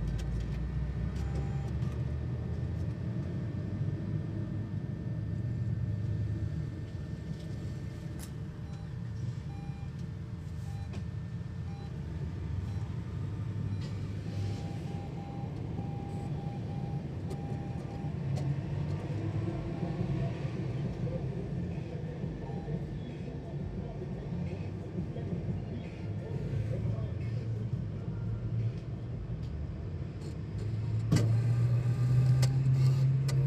ATM Wells Fargo Emeryville
ATM Wells Fargo
Emeryville, CA, USA, 18 November 2010